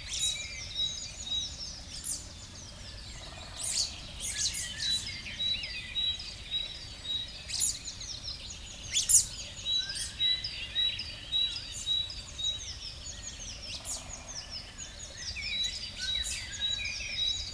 Stoumont, Belgium - Birds waking up
During my breakfast. A small stream, and birds waking up. A very excited Common Chaffinch singing and fighting !